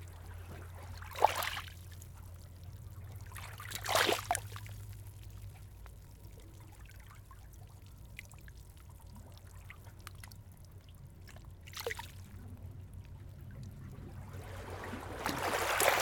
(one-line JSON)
{"title": "Nooda tee, Tallinn, Eesti - A rocky beach", "date": "2019-09-30 12:00:00", "description": "The waves land on a rocky beach. Further afield you can hear the road construction. Recorder: Zoom H6, MSH-6 mic capsule.", "latitude": "59.45", "longitude": "24.61", "altitude": "15", "timezone": "Europe/Tallinn"}